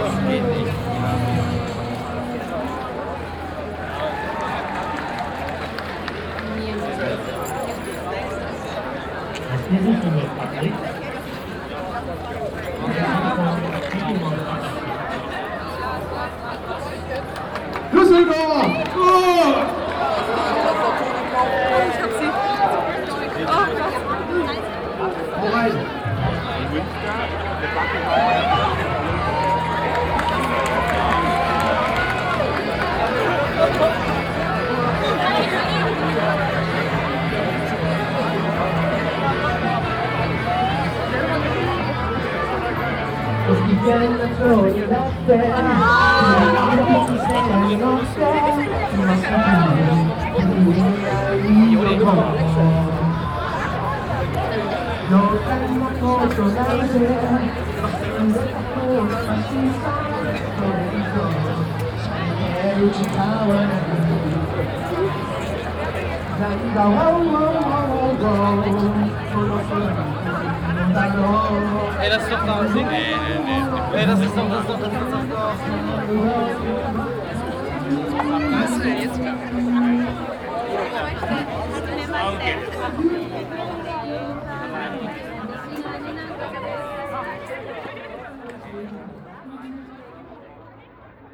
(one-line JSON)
{"title": "Carlstadt, Düsseldorf, Deutschland - düsseldorf, rhine promenade, jpan day", "date": "2012-06-02 15:30:00", "description": "Walking at the Rhine promenade during the annual Japan day. The sound of visitors and manga fans talking and passing by - at the end the sound of a public karaoke stage.\nsoundmap nrw - social ambiences and topographic field recordings", "latitude": "51.22", "longitude": "6.77", "altitude": "38", "timezone": "Europe/Berlin"}